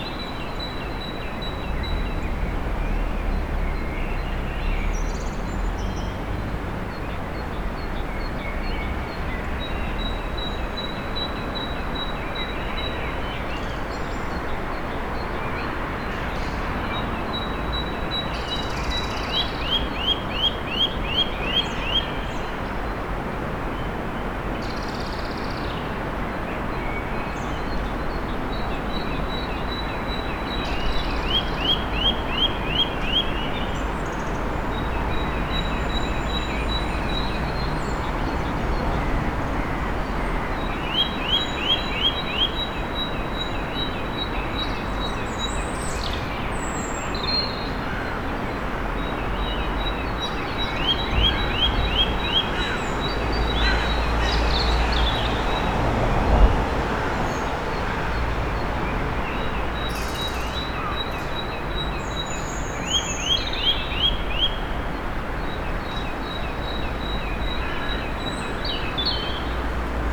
Innsbruck, vogelweide, Waltherpark, Österreich - Frühling im Waltherpark/vogelweide, Morgenstimmung
walther, park, vogel, weide, vogelgezwitscher, autoverkehr, stadtgeräusche, singende vögel, winterzeit gegen 5:44, waltherpark, vogelweide, fm vogel, bird lab mapping waltherpark realities experiment III, soundscapes, wiese, parkfeelin, tyrol, austria, anpruggen, st.
Innsbruck, Austria, 14 March